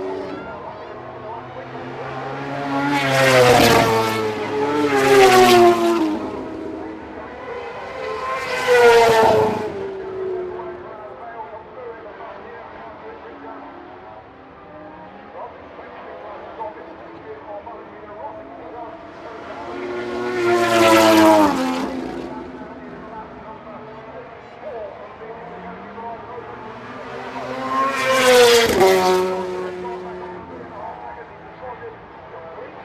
Unnamed Road, Derby, UK - British Motorcycle Grand Prix 2004 ... Race ...
British Motorcycle Grand Prix 2004 ... Race ... stereo one point mic to mini-disk ... commentary ...